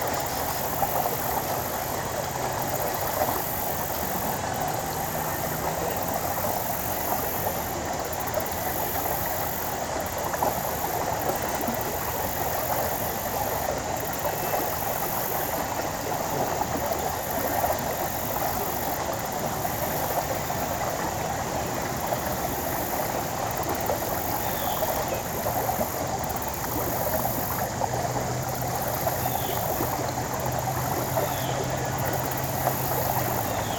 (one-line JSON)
{"title": "Sewell Mill Creek, Marietta, GA, USA - Sewell Mill Creek", "date": "2020-09-30 16:16:00", "description": "Right near the water of Sewell Mill Creek. Water flows over a fallen log to the right of the recorder and insects can be heard from each side. If you listen closely, you can hear a faint mechanical sawing sound to the left of the mic throughout parts of the recording. Some people can also be heard off to the left.\nThis recording was made with the unidirectional microphones of the Tascam DR-100mkiii. Some EQ was done in post to reduce rumble.", "latitude": "33.97", "longitude": "-84.46", "altitude": "291", "timezone": "America/New_York"}